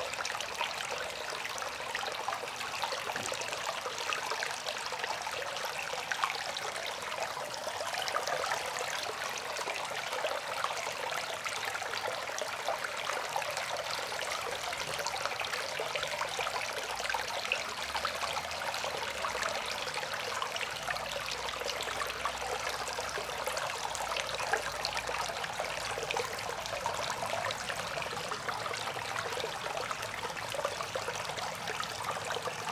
Frederick Cres, Port Ellen, Isle of Islay, UK - Rain water drain pipe
Sound of a rain water drain pipe on the beach of Port Ellen.
Recorded with a Sound Devices MixPre-6 mkII and a pair of stereo LOM Uši Pro.